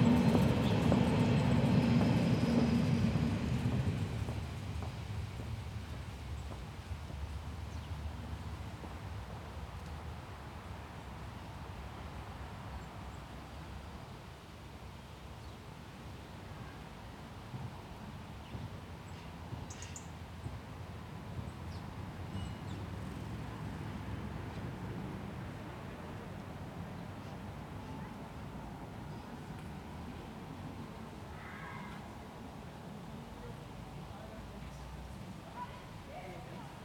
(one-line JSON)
{"title": "Grüntaler Straße, Soldiner Kiez, Wedding, Berlin, Deutschland - Grüntaler Straße 57a, Berlin - Soldiner Kiez' quiet corner next to Prenzlauer Berg", "date": "2012-10-04 16:18:00", "description": "Grüntaler Straße 57a, Berlin - Soldiner Kiez' quiet corner next to Prenzlauer Berg. Besides faint noises from an allotment colony there are only some passers-by to be heard and the S-Bahn (urban railway) at regular intervals.\n[I used the Hi-MD-recorder Sony MZ-NH900 with external microphone Beyerdynamic MCE 82]\nGrüntaler Straße 57a, Berlin - Eine stille Ecke im Soldiner Kiez in direkter Nachbarschaft zum Prenzlauer Berg. Außer gedämpften Arbeitsgeräuschen aus der nahen Kleingartenkolonie sind hier nur gelegentlich Passanten zu hören, und natürlich die S-Bahn in regelmäßigen Abständen.\n[Aufgenommen mit Hi-MD-recorder Sony MZ-NH900 und externem Mikrophon Beyerdynamic MCE 82]", "latitude": "52.56", "longitude": "13.40", "altitude": "45", "timezone": "Europe/Berlin"}